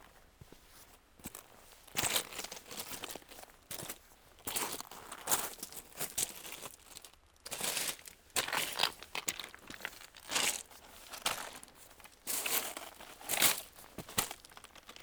Woignarue, France - Walking on the pebbles
Walking on the pebbles on a shingle beach, near the small city called Ault.